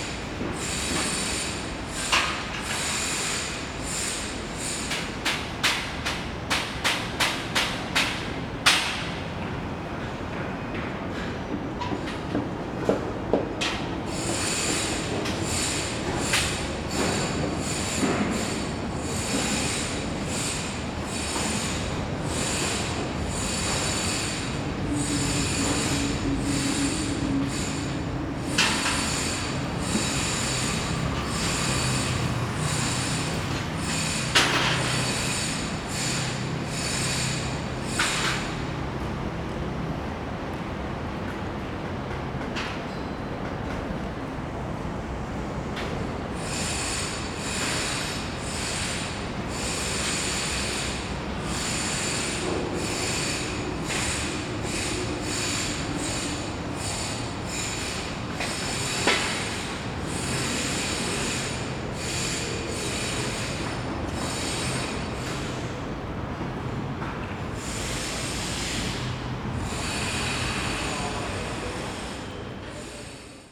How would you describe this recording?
Sound of construction, Zoom H4n + Rode NT4